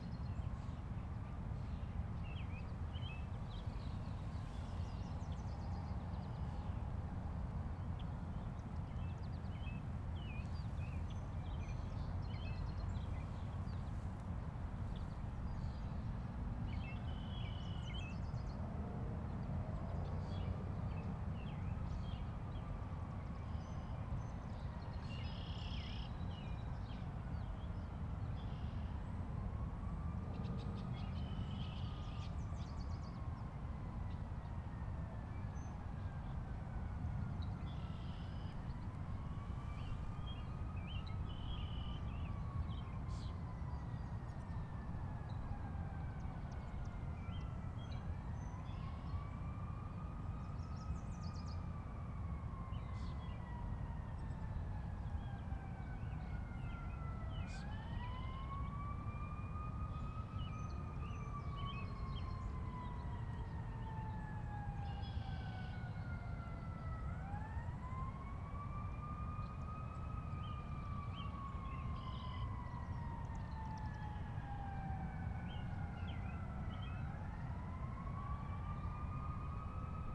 {"title": "Washington Park, South Doctor Martin Luther King Junior Drive, Chicago, IL, USA - Summer Walk 2", "date": "2011-06-18 14:15:00", "description": "Recorded with Zoom H2. Recording of interactive soundwalk.", "latitude": "41.79", "longitude": "-87.61", "altitude": "184", "timezone": "America/Chicago"}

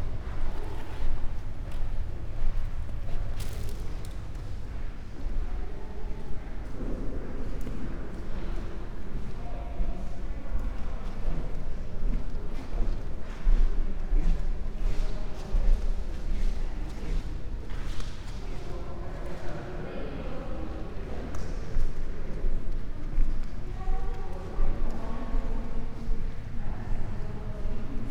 {"title": "Euphrasian Basilica Complex, Poreč, Croatia - spacious rooms", "date": "2013-07-20 12:41:00", "description": "with wooden floors ... quick intervention of opening up a small window, to release outside in", "latitude": "45.23", "longitude": "13.59", "altitude": "17", "timezone": "Europe/Zagreb"}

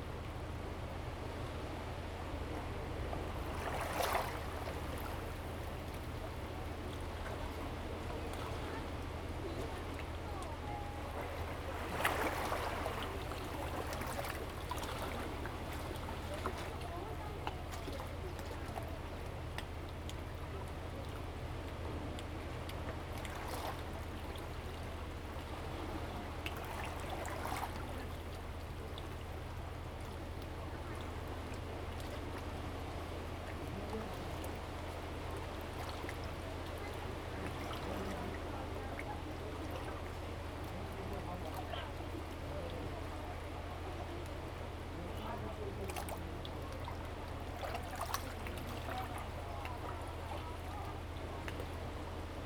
{"title": "杉福村, Hsiao Liouciou Island - Sound wave", "date": "2014-11-01 12:08:00", "description": "Sound wave, below the big rock\nZoom H2n MS +XY", "latitude": "22.34", "longitude": "120.36", "altitude": "12", "timezone": "Asia/Taipei"}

